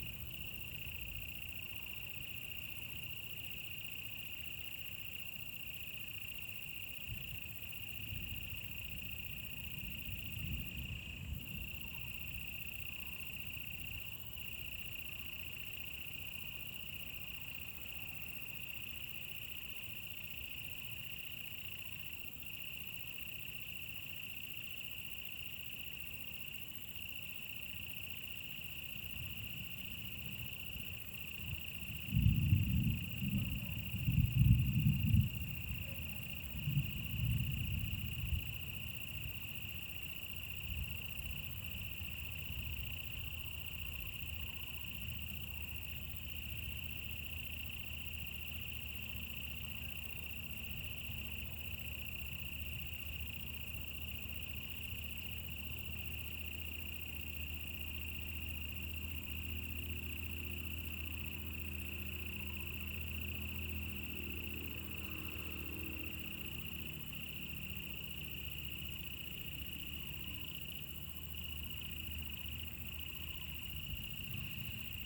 埔里鎮南村里, Nantou County - Sound of insects
Bird sounds, Sound of insects, In the woods
Zoom H2n MS+XY